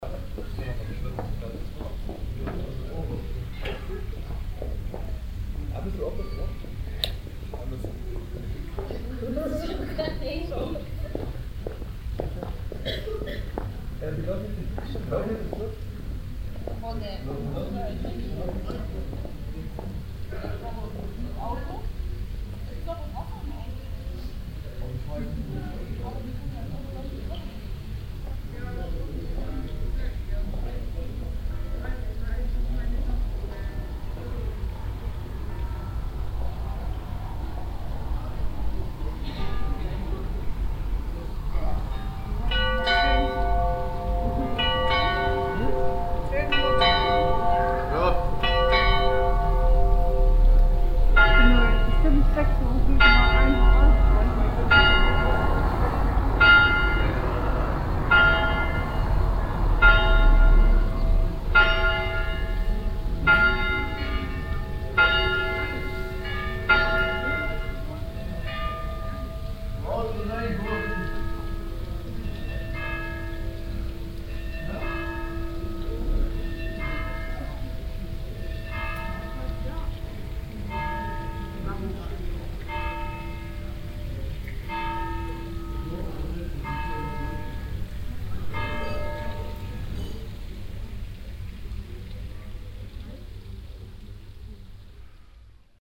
On the market square. First the sound of some passengers crossing the square then distant hour bells from the castle and old town hall followed by the evening bells of the new town hall. Its 10 p.m.
soundmap d - topographic field recordings and social ambiences
Rudolstadt, Germany, 6 October 2011, 14:10